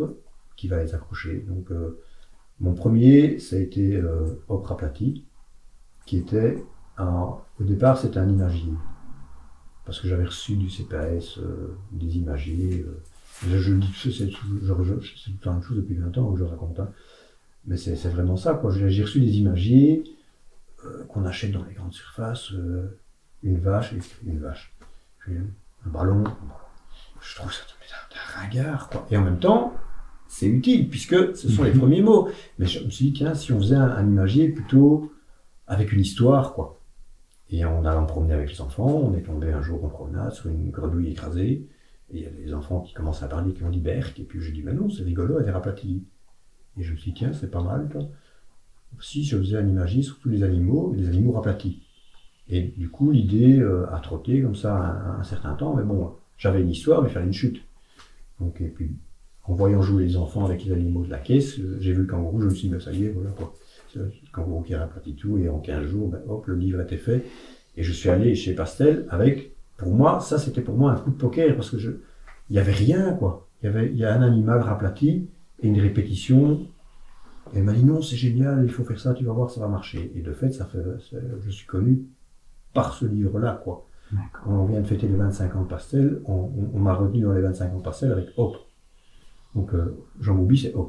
Court-St.-Étienne, Belgique - Papaloup
Interview of "Papaloup", a drawer for very young children (1-3 years). He explains why he began to draw and why he went to be baby keeper.
Court-St.-Étienne, Belgium, 27 June, 14:10